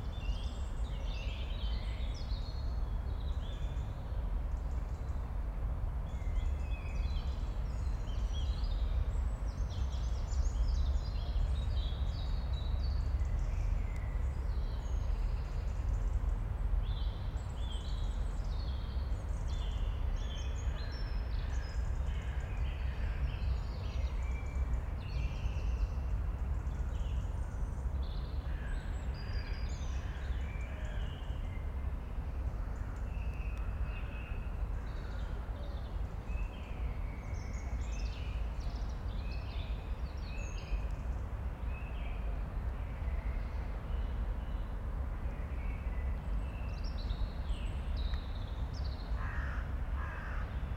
Av. Gabriel Fauré, Forest, Belgique - Parc Duden end of the day
About this place, I heard it was part of "Forêt de soignes" in the past (a big forest located in the south/south east of brussels). With time this parc became an enclave but offers an refuge for human and non-human. We are located higer than the rest of the city that we can have a good visual and sonique perception of it. We are surrounded by big old beech, and overhang a bowl, the rare leaves are found on small trees below, I'm asking me what will become this acoustic later.
Région de Bruxelles-Capitale - Brussels Hoofdstedelijk Gewest, België / Belgique / Belgien, 31 March, 20:16